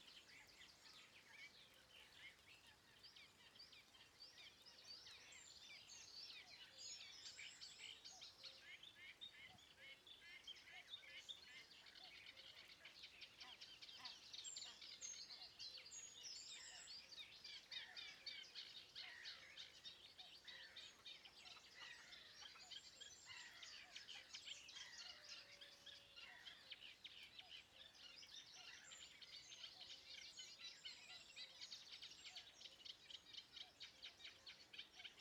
Saint-Omer, France - Étang du Romelaëre - Clairmarais
Étang du Romelaëre - Clairmarais (Pas-de-Calais)
Ambiance matinale
ZOOM H6 + Neumann KM184
France métropolitaine, France